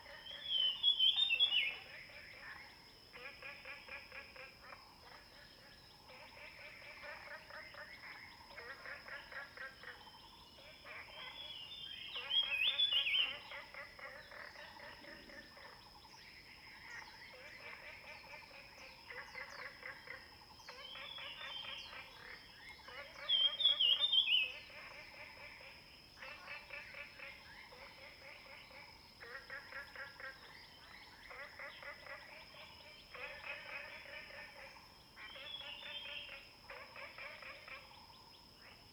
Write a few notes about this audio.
Frog sounds, In the woods, Faced with ecological pool, Zoom H2n MS+XY